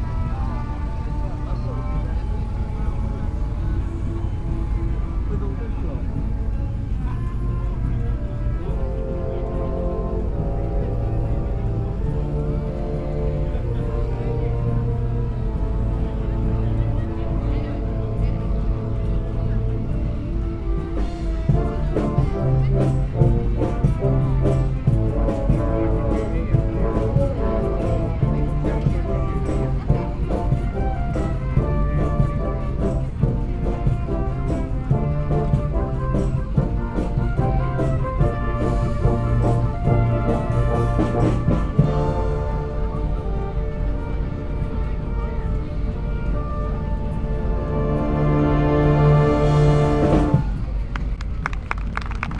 A walk around part of the Welland Steam Rally including road building, ploughing, engines modern and old, voices, brass band, steam organ.
West Midlands, England, United Kingdom, July 2019